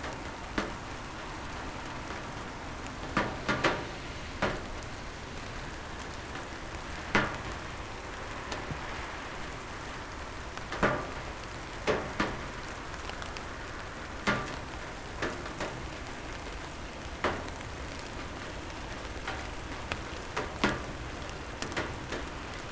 Mesaanitie, Oulu, Finland - Summer rain
Calm summer rain recorded from my open window. Cars driving by. Zoom H5, default X/Y module
Pohjois-Pohjanmaa, Manner-Suomi, Suomi, 30 June 2020